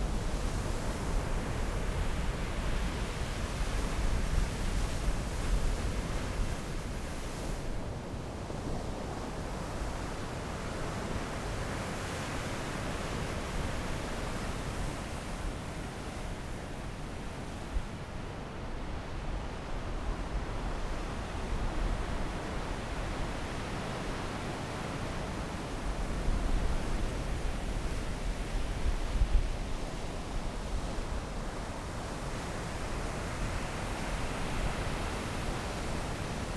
Taitung County, Taiwan - Sound of the waves
At the beach, Sound of the waves, Fighter flight traveling through, Zoom H6 M/S + Rode NT4
Taitung City, Taitung County, Taiwan, January 2014